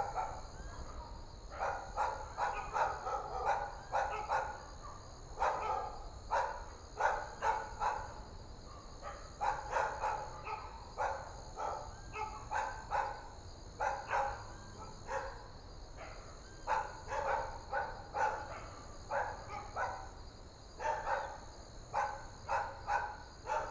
Taitung Forest Park, Taiwan - The park at night
The park at night, Birds singing, Dogs barking, The distant sound of traffic and Sound of the waves, Zoom H6 M/S